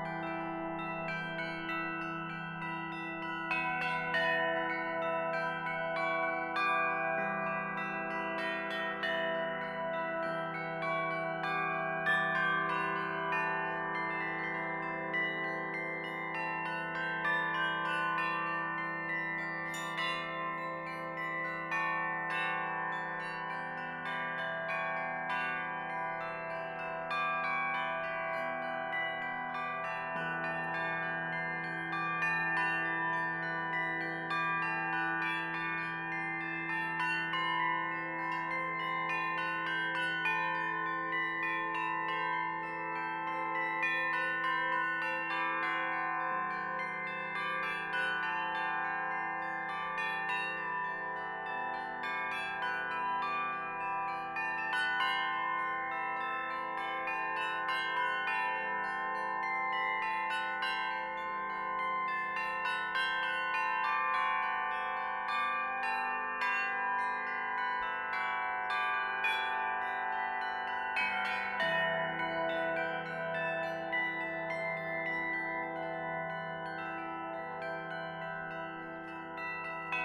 Андропова пр-т, строение, Москва, Россия - Russian instrument Bilo
Russian instrument "Bilo" (flat bells). The recording was made in the park "Kolomenskoye" on June 22, 2014.
Москва, ЦФО, РФ